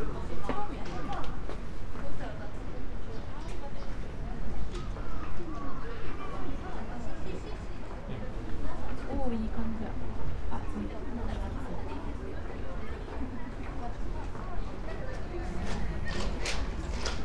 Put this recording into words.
walk through garden just before closing time, steps, stones, waters, passers-by, birds